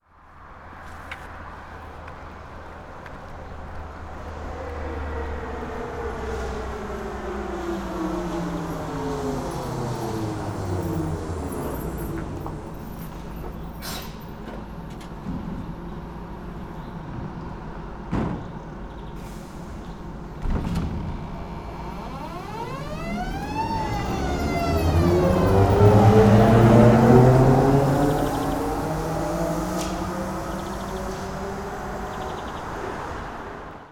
Tallinn, Kopli, Marati, trolly bus
trolly bus arrives and departs at marati station.